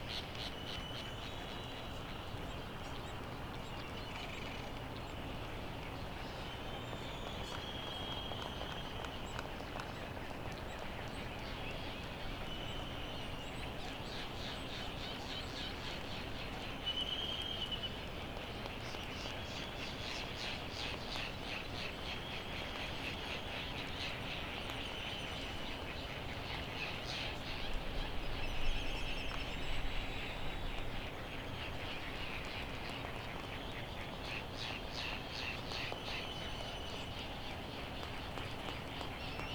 United States Minor Outlying Islands - Laysan albatross soundscape
Sand Island ... Midway Atoll ... open lavalier mics ... bird calls ... laysan albatross ... white terns ... black noddy ... bonin petrels ... background noise ...